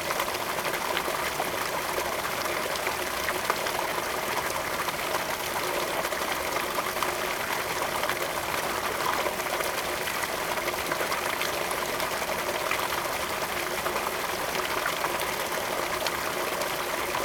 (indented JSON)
{
  "title": "Guanshan Township, Taitung County - Irrigation waterway",
  "date": "2014-09-07 10:22:00",
  "description": "Irrigation waterway, Traffic Sound, The sound of water, Very hot weather\nZoom H2n MS+ XY",
  "latitude": "23.05",
  "longitude": "121.17",
  "altitude": "221",
  "timezone": "Asia/Taipei"
}